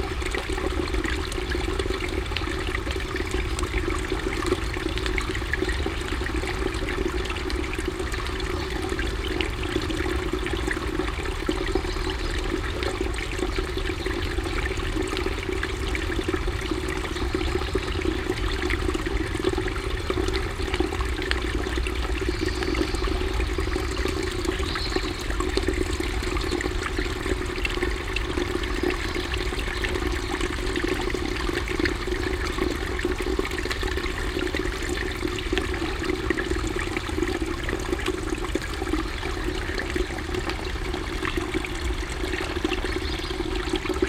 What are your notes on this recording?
kleiner wasserlauf, gluckernd, teils wieder im waldboden verschwindend, an weitem feld und waldrand, morgens, - soundmap nrw, project: social ambiences/ listen to the people - in & outdoor nearfield recordings